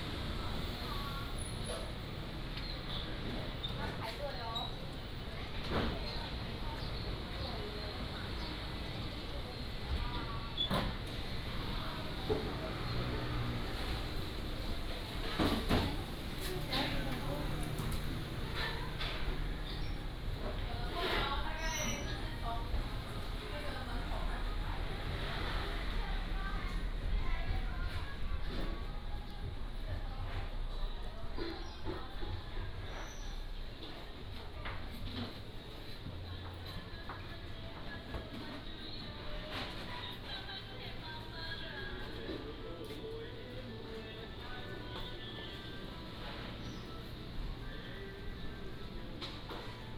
西門市場, 台南市東區 - Old market
Old market, Is being renovated, Walking in the traditional market, A small number of stores in business
Tainan City, Taiwan